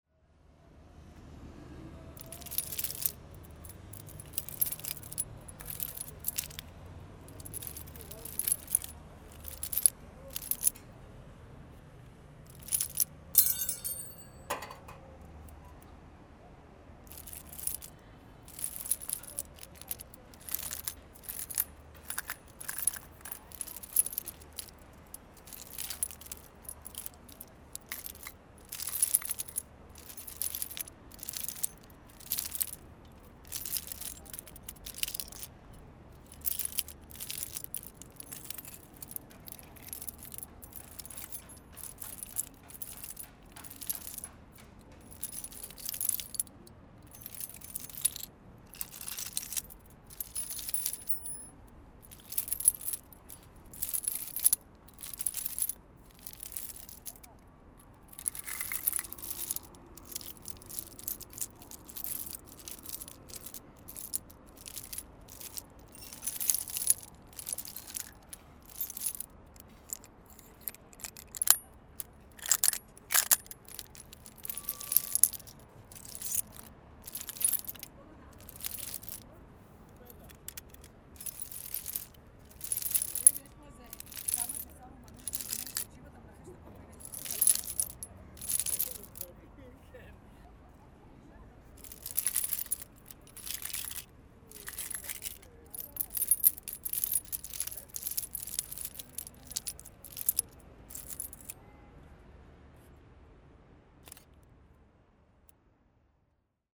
Pont Neuf, Paris, France - Locks
Lovers put locks on the barriers, it's a vogue. I play with the locks in aim to hear it.